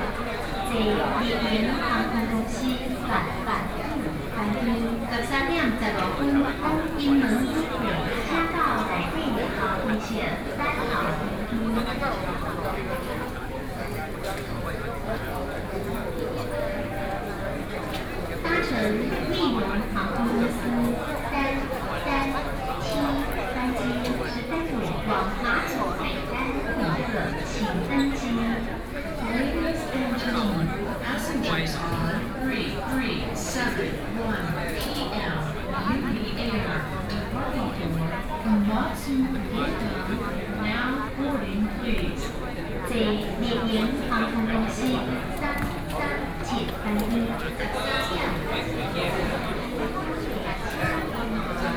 Taipei city, Taiwan - inside the Airport
9 November, 12:52